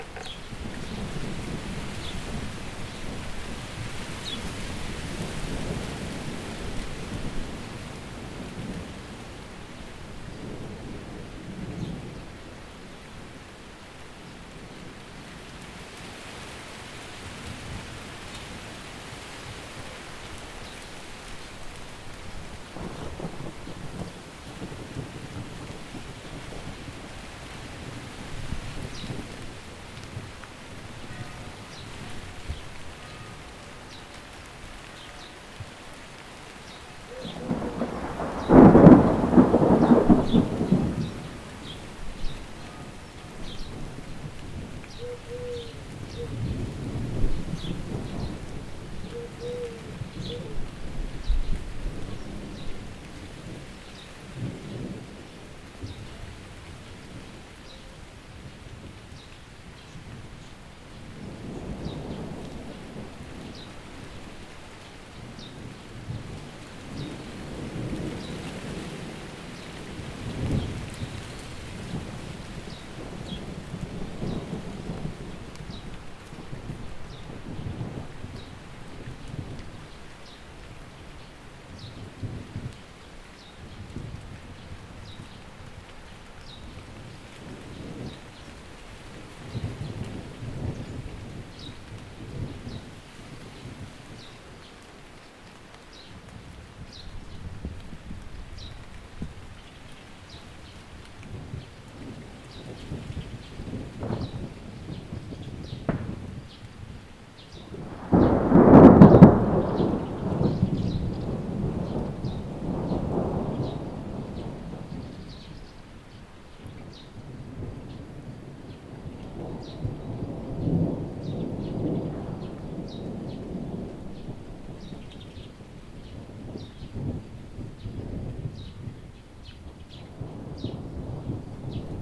First time I record here. It was raining so i catched the opportunity to make an audio clip